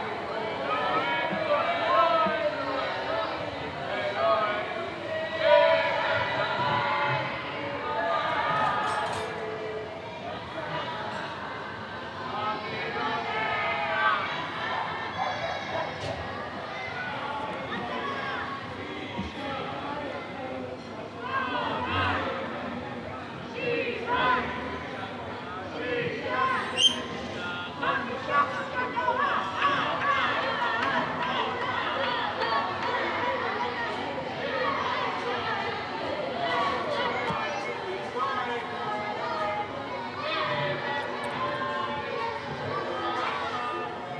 Iris St, Kiryat Ono, Israel - Jewish Leil Seder durnig quarantine April 2020
Jewish Leil Seder durnig quarantine April 2020
מחוז תל אביב, ישראל